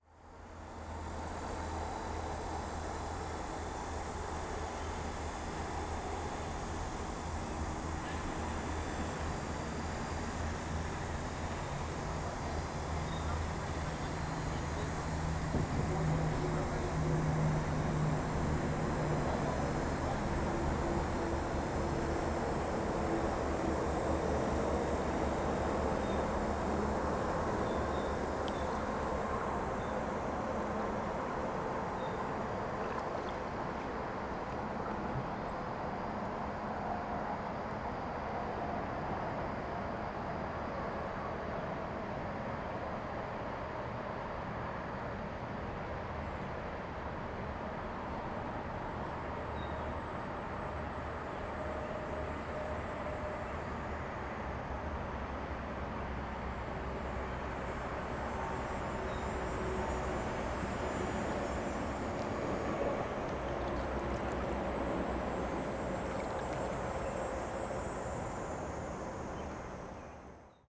Gerald Ford Freeway, South Haven, Michigan, USA - Gerald Ford Freeway
Ambient recording from packraft while floating the Black River and passing under Gerald Ford Freeway.
Michigan, United States, 20 July 2021, ~12:00